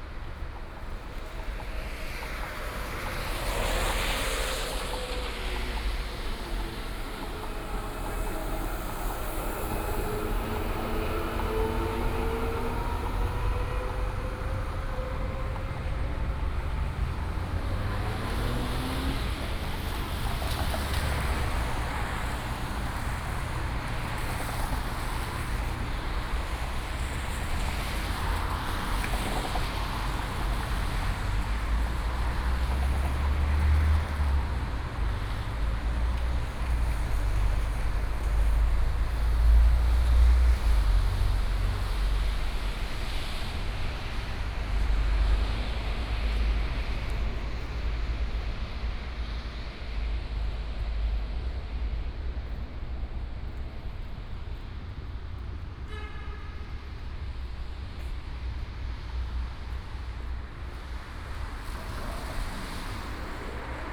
Ludwigsvorstadt-Isarvorstadt, 慕尼黑德國 - Soundwalk
From the beginning the crossroads, Then towards the station, Walking in the station platform, Direction to the station hall, Traffic Sound, Voice traffic lights